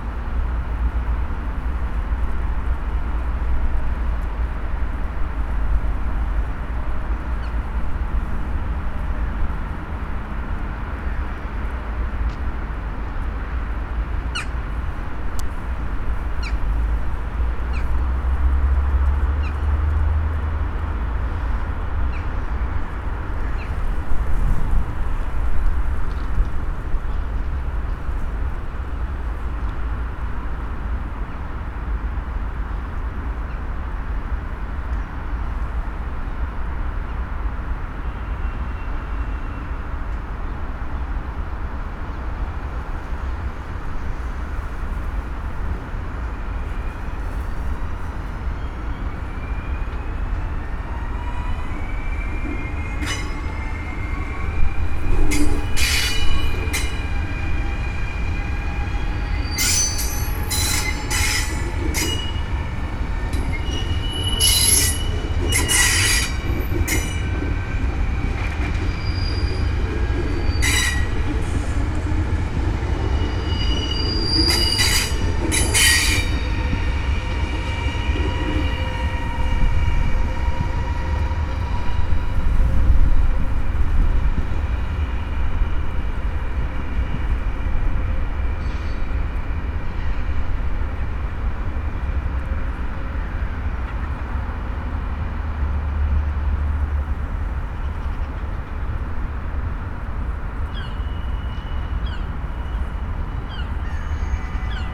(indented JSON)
{
  "title": "Binckhorst, Laak, The Netherlands - by the train tracks",
  "date": "2012-03-02 16:15:00",
  "description": "recorded with binaural DPA mics and Edirol R-44",
  "latitude": "52.07",
  "longitude": "4.34",
  "timezone": "Europe/Amsterdam"
}